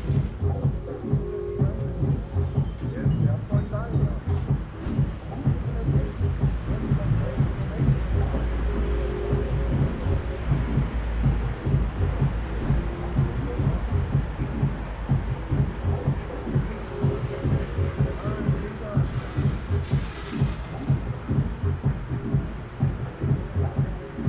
the city, the country & me: june 14, 2008